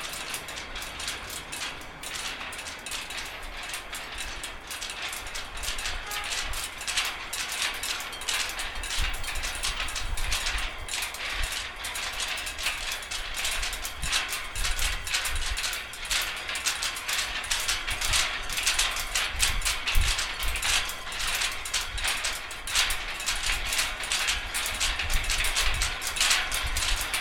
hotel, beijing
flapping, cords, beijing, hotel, flags
2 April, ~18:00